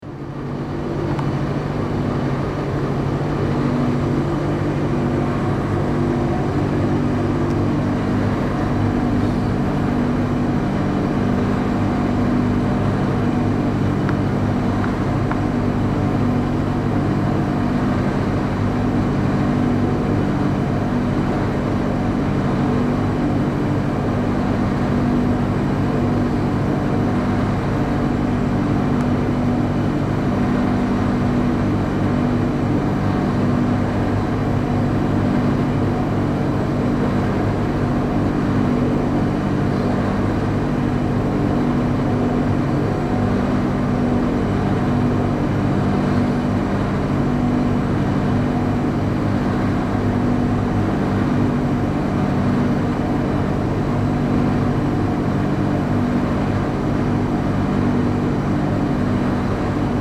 Altenessen - Süd, Essen, Deutschland - essen, zeche carl, backstage, refrigerators
In der Zeche Carl in der Künstlergarderobe. Der Klang der Kühlschränke.
At the backstage area of the cultural venue Zeche Carl. The sound of the refrigerators.
Projekt - Stadtklang//: Hörorte - topographic field recordings and social ambiences
Essen, Germany, 10 May 2014